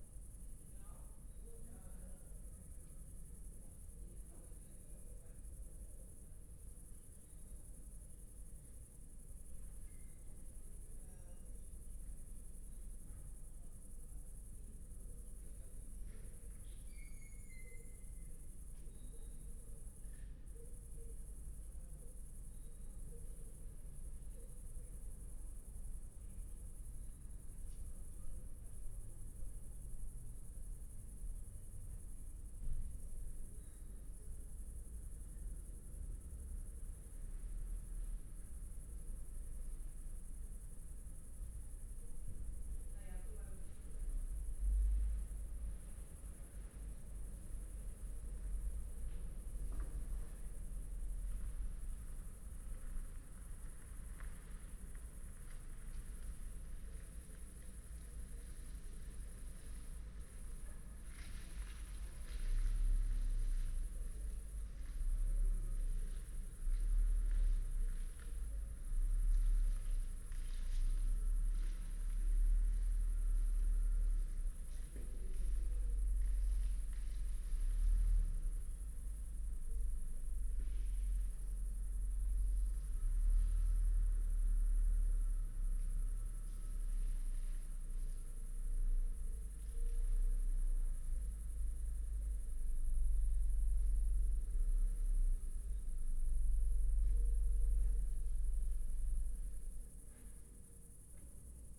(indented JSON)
{
  "title": "Berlin Bürknerstr., backyard window - Hinterhof / backyard ambience",
  "date": "2021-07-28 22:44:00",
  "description": "22:44 Berlin Bürknerstr., backyard window\n(remote microphone: AOM5024HDR | RasPi Zero /w IQAudio Zero | 4G modem",
  "latitude": "52.49",
  "longitude": "13.42",
  "altitude": "45",
  "timezone": "Europe/Berlin"
}